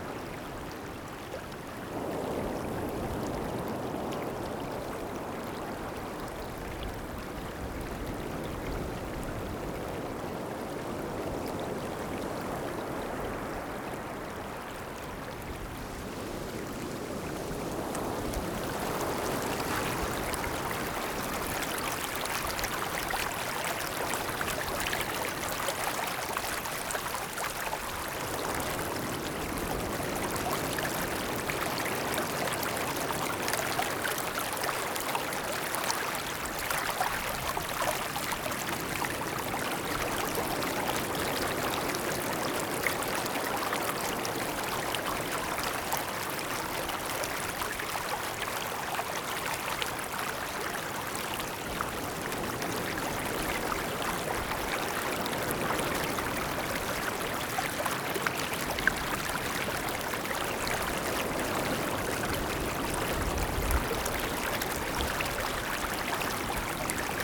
Zhiben 知本濕地, Taitung City - Sound of the waves
Sound of the waves, The sound of water, Zoom H6 M/S
Taitung County, Taiwan, 17 January 2014